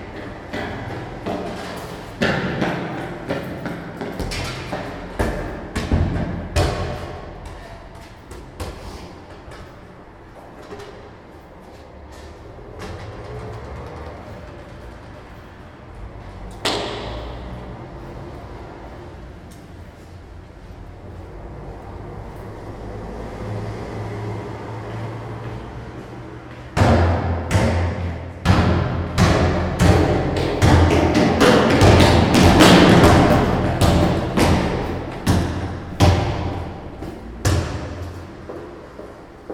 Ústí nad Labem-město, Česká republika - Bouncing ball in the corridor
Bouncing basketball in the pedestian underground corridor, which serves as a sound gallery Podchod po skutečností.